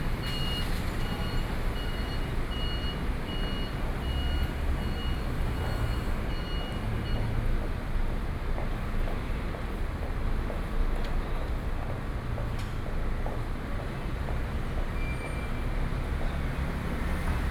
In the gallery's entrance, Workers are repairing the door, Traffic Noise, Sony PCM D50 + Soundman OKM II
9 July 2013, ~17:00